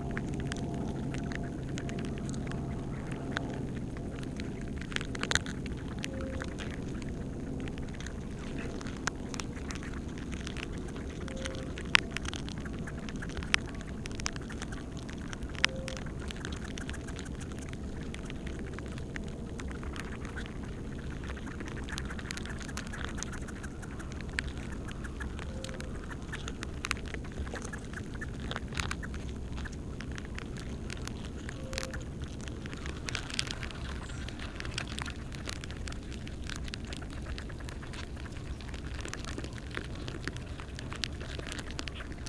Villavicencio, Meta, Colombia - From an ant's ear
This recording was taken at the entrance of an ant nest. There's no further edition of any kind.
Zoom H2n with primo EM 172
For better audio quality and other recordings you can follow this link:
José Manuel Páez M.
10 January 2016, 10:36